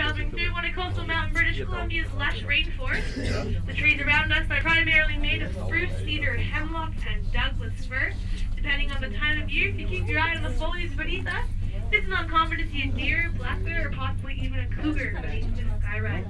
vancouver, grouse mountain, skyride, on the way up
in the cabin on it's way up to the mountain station, a guide giving informations via mic while the ride
soundmap international
social ambiences/ listen to the people - in & outdoor nearfield recordings